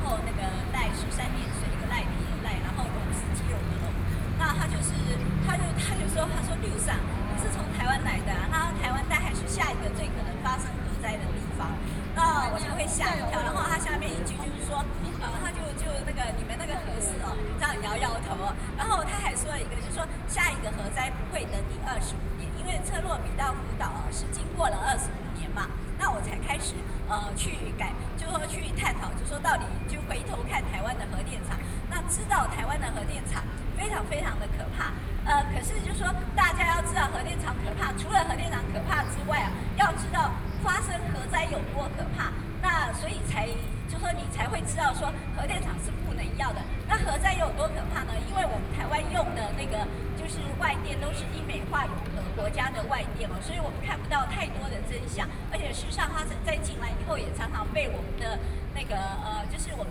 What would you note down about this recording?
Famous writer, speech, Opposition to nuclear power, Binaural recordings